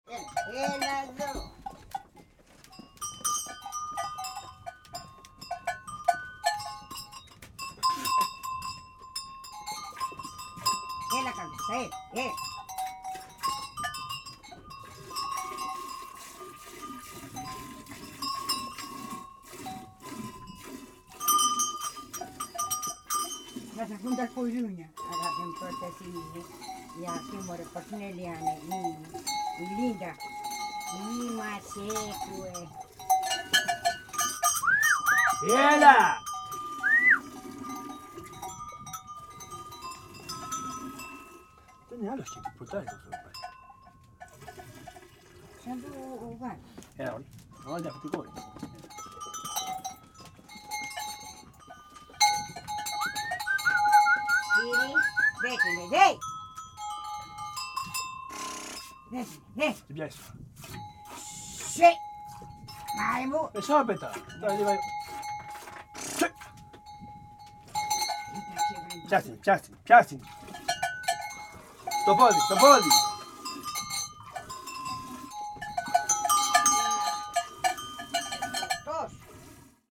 Patmos, Vagia, Griechenland - Alphütte
Mutter und Sohn beim Melken von Ziegen.
Juni 2002
Kampos, Greece, 6 June, 10:00